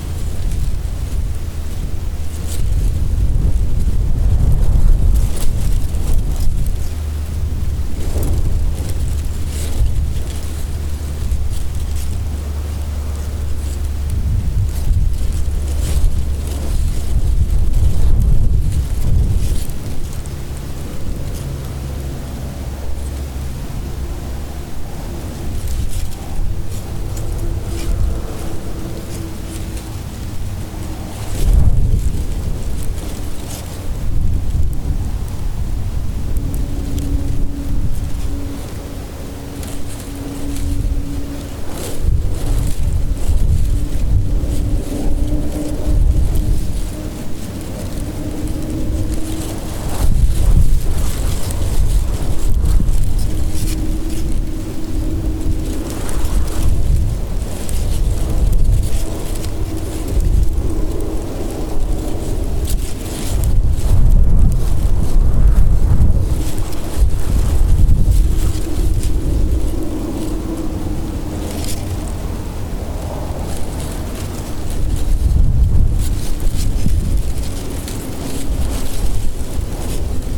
Recording of a very dry and windswept bush on the top of a sand dune in Liwa, Abu Dhabi, United Arab Emirates. I'm not sure if this is the precise location but it was close by.
2 September 2015, 20:08